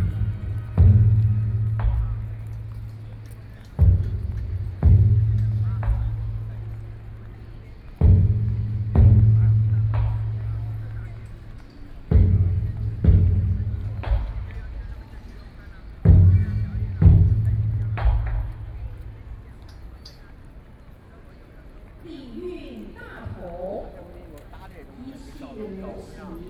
National Chiang Kai-shek Memorial Hall, Taipei - ceremony
Martial religious sects ceremony, Sony PCM D50 + Soundman OKM II
台北市 (Taipei City), 中華民國, May 2013